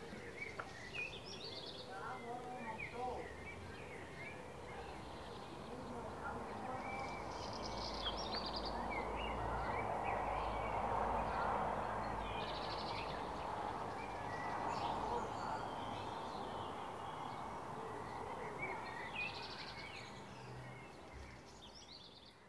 church bell at 8pm, village sounds
(Sony PCM D50)
Beselich, Deutschland, 3 July 2015, 20:05